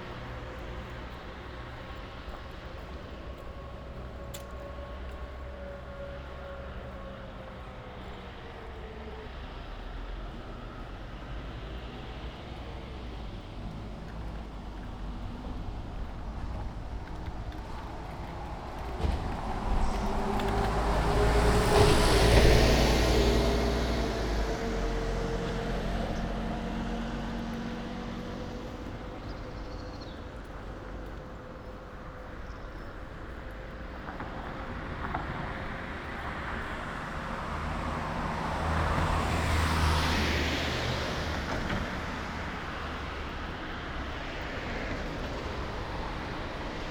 “Posting postcards on May 1st at the time of covid19” Soundwalk
Chapter LXII of Ascolto il tuo cuore, città. I listen to your heart, city.
Tuesday April 28th 2020. Walking to outdoor market and posting postcard, San Salvario district, fifty two days after emergency disposition due to the epidemic of COVID19.
Start at 11:23 a.m. end at h. 11:50 a.m. duration of recording 27’17”
The entire path is associated with a synchronized GPS track recorded in the (kml, gpx, kmz) files downloadable here: